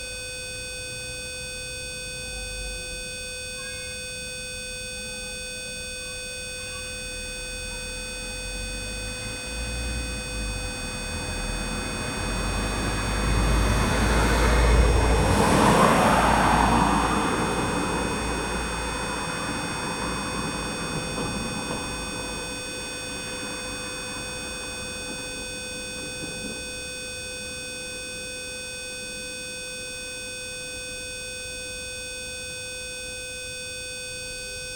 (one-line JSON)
{"title": "Rambouillet, France - Doorbells problem", "date": "2019-01-01 17:00:00", "description": "Walking in the Rambouillet city, I was intrigued by a curious sound. It's a doorbell problem. I stayed behind and recorded the unpleasant whistling. An old lady explains me it doesn't work and bawls out her small dog.", "latitude": "48.64", "longitude": "1.83", "altitude": "155", "timezone": "GMT+1"}